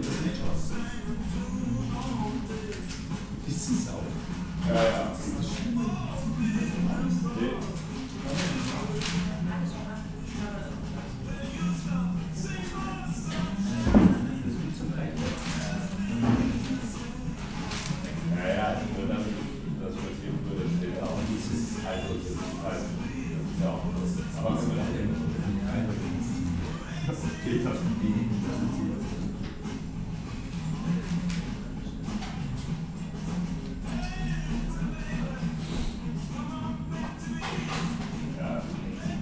ringo - cafe ambience, gone
Ringo Cafe, saturday early afternoon, cafe ambience, almost intimate sounds of a place that will have gone missing soon.
(Sony PCM D50, DPA4060)